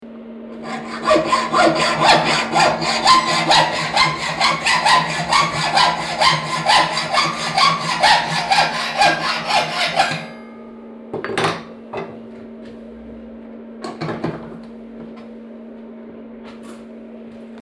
the sound of metal being sawed
soundmap nrw - social ambiences and topographic field recordings
Cologne, Germany, May 2012